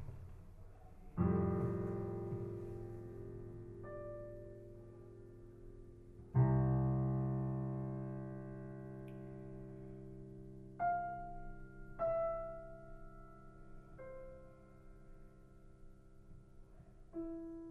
{"title": "kasinsky: a day in my life", "date": "2010-05-26 19:39:00", "description": "...pending actors, I find an electric piano...and play it...", "latitude": "42.86", "longitude": "13.57", "altitude": "158", "timezone": "Europe/Rome"}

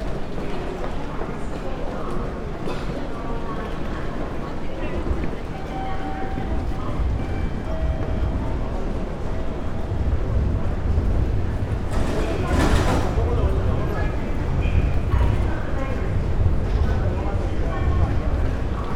{"title": "tokyo station, tokyo - central gate, approaching exit", "date": "2013-11-11 12:48:00", "latitude": "35.68", "longitude": "139.77", "altitude": "13", "timezone": "Asia/Tokyo"}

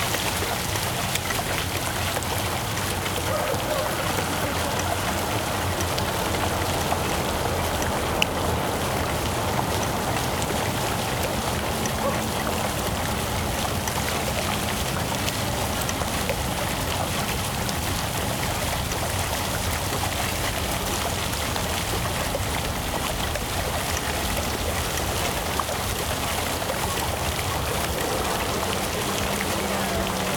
{"title": "Basel, Switzerland - Tinguely Brunnen", "date": "2013-10-20 18:30:00", "description": "Fountain with sculptures by artist Jean Tinguely. Water, tram in the background, church bells, distant voices(Zoom H2n, internal mics, MS-mode)", "latitude": "47.55", "longitude": "7.59", "altitude": "268", "timezone": "Europe/Zurich"}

